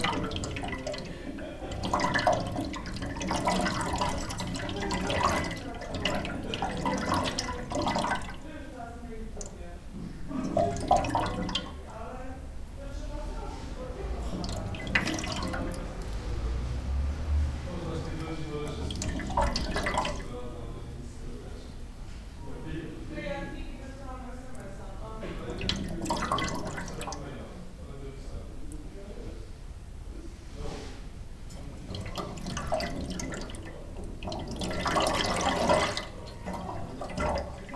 bonifazius, bürknerstr. - Heizung
defekte Heizung wird repariert / broken heating is beeing repaired.
4 December, 16:25